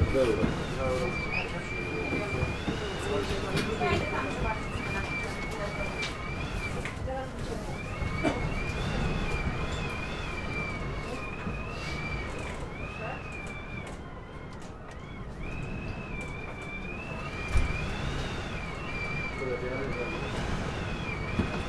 Sniezka mountain - entrance room to the cafe
entrance room to the cafe on the top of the mountain. a few tourists talking. wind penetrating through chinks in the wooden walls. coin pressing machine clatter. (sony d50)
Pec pod Sněžkou, Czechia, 22 January 2017, 13:08